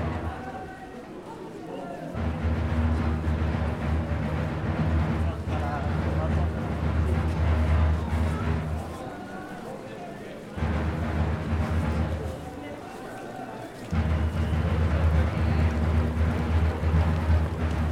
Strada Republicii, Brașov, Romania - 2016 Christmas in Brasov - Drums and Bears
There is an old tradition on Christmas in Romania where in rural villages young people would dress as bears and do a ritual most probably of pagan origins, going from house to house doing chants and rhythms. Nowadays, in cities there are people who only pretend to re-enact this ritual, dressing with poor imitations and very low musical sense, if any. They beat some makeshift drums with the same rhythm, say some rhymes that don't have much sense but most importantly expect passers-by to throw them money for the "show". You can hear the drums getting louder as they slowly approach from the side. Recorded with Superlux S502 Stereo ORTF mic and a Zoom F8 recorder.